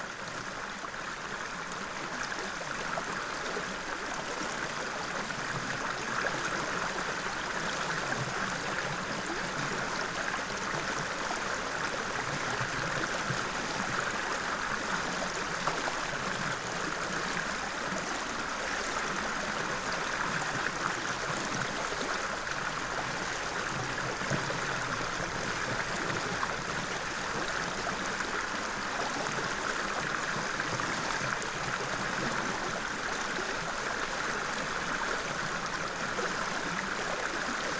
Pačkėnai, Lithuania, at beaver dam
river Viesa. beaver dam.